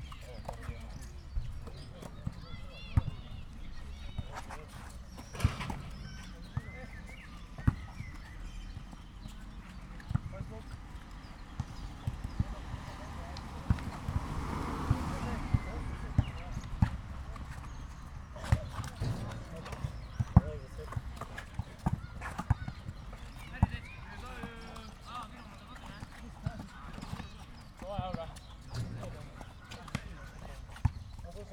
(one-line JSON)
{"title": "Maribor, Kamniska ulica - basketball field", "date": "2012-05-30 19:55:00", "description": "soccer and basketball fields near Maribor stadium, youngsters are playing basketball, nice sound of sports shoes on the wet ground.\n(SD702 DPA4060)", "latitude": "46.57", "longitude": "15.64", "altitude": "278", "timezone": "Europe/Ljubljana"}